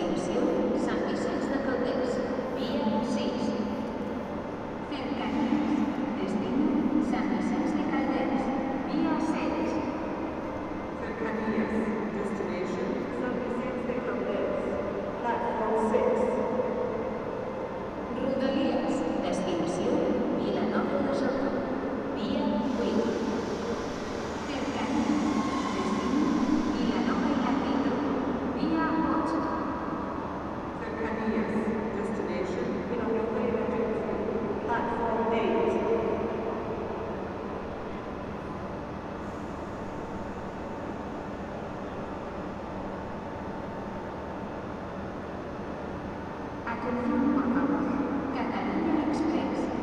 Estació de França
Mythical ferroviary Station. Long reverbs and echoing